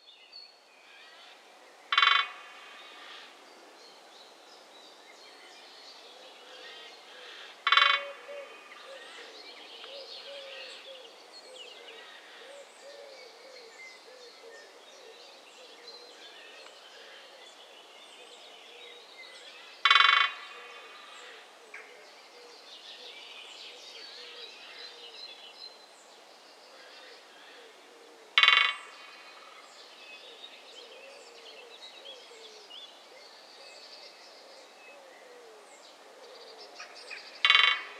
Wangeroogeweg, Münster, Germany - Woodpecker vs. metal lamp post
Recording using Zoom H5
Nordrhein-Westfalen, Deutschland, 7 April 2021